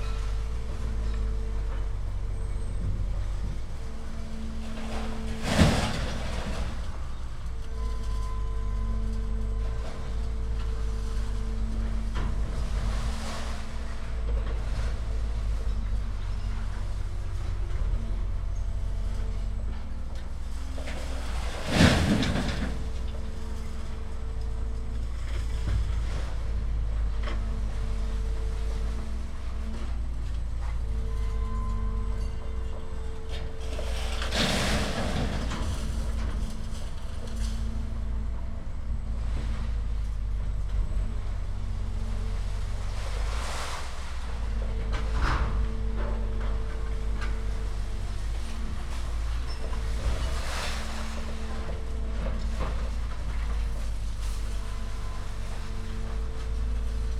Maribor, Tezno, Ledina - scrapyard
scrapyard ambience, big machines are moving tons of metal
(SD702, DPA4060)
Maribor, Slovenia, 28 May 2012